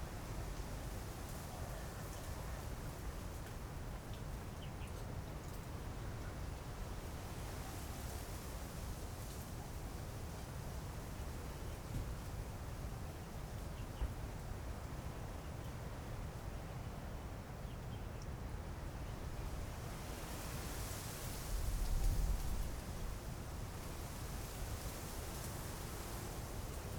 Changhua County, Taiwan - At the beach
The sound of the wind, Cold weather, Birds sound, Windy, Zoom H6